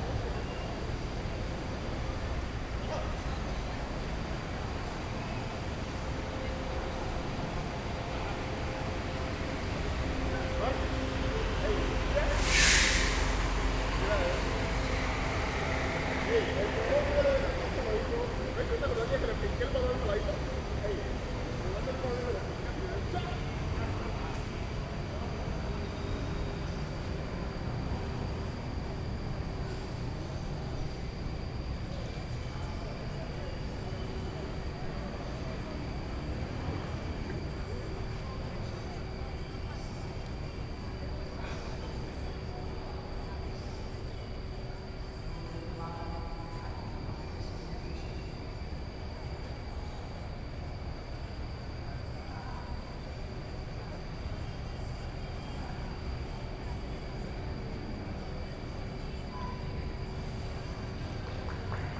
Cancha de Baloncesto los Alpes, Esquina Carrera, Cl., Belén, Medellín, Antioquia, Colombia - Parque Nocturno
Se escucha los grillos, personas hablando, el sonido de bus, personas aplaudiendo. Se escucha un motor y un objeto caerse.
5 September 2022, 20:05